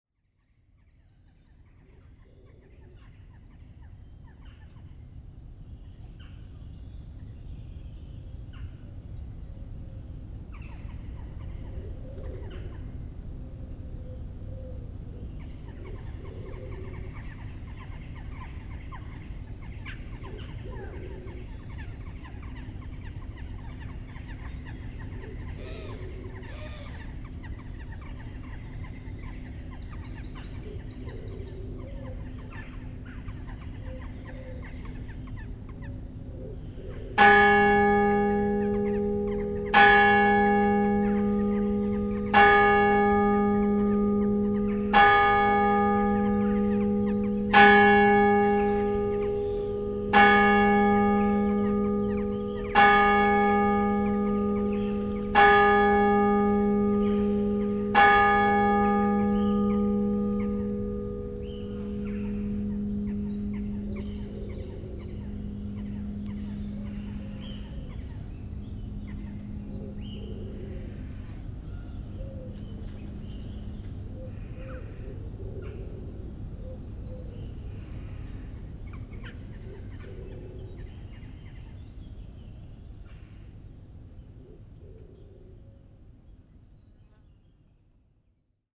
Beveren, Belgium - Doel church
The solo bell of Doel church, ringing nine on the morning.